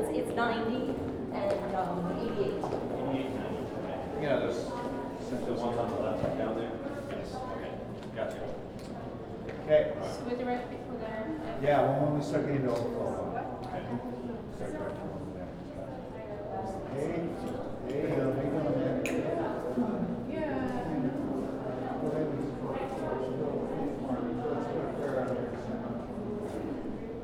neoscenes: before Memorial
Memorial for Garrison Roots